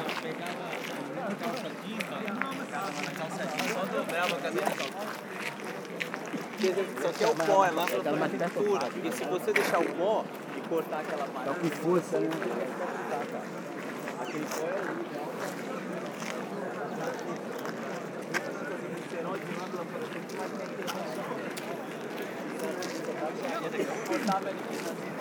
København, Denmark - Christiania anarchist disctrict
A short walk into the Christiania district, a free area motivated by anarchism. People discussing, drinking a lot, and buying drugs to sellers.